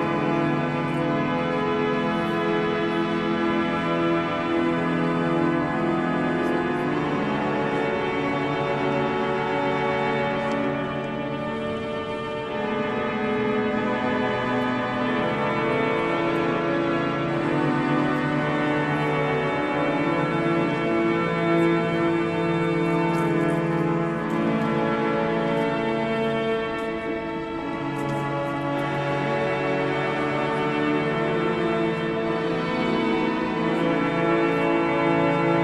Organ Catedral de Cádiz
Organ during a mass, recorded in the Catedral de Cádiz on September 14th 2008. The organ was in a terrible condition. So was the church. They placed nets to prevent stones from falling on the churchgoers.
Cadiz, Spain, 14 September 2008, 13:00